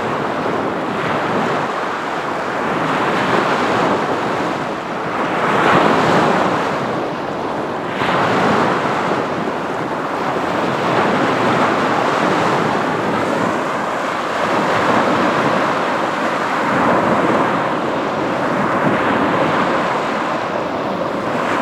Wyspa Sobieszewska, Gdańsk, Poland - Morze ranek
Morze ranek rec. Rafał Kołacki